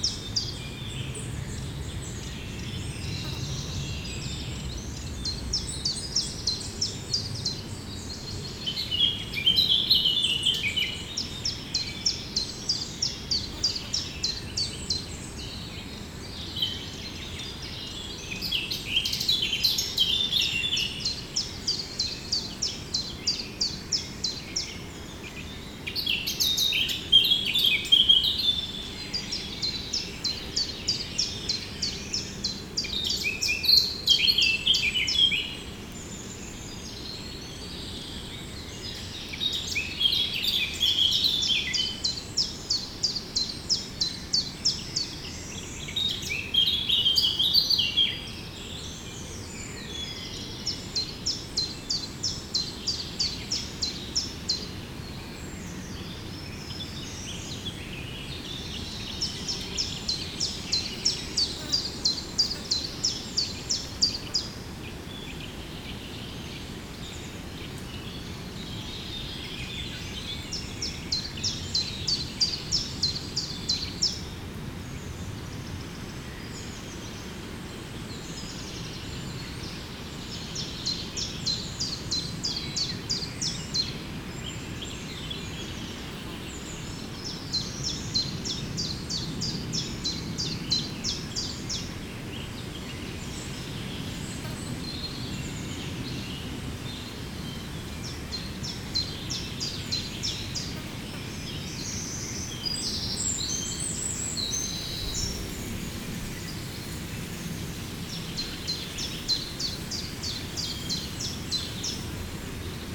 Chaumont-Gistoux, Belgique - In the woods
Walking threw the woods, the Eurasian Blackcap singing. 1:20 mn, I'm detected and one of the birds gives an alarm signal. Only the Common Chiffchaff is continuing, but quickly the territorial Eurasian Blackcap is going back to the elevated tree.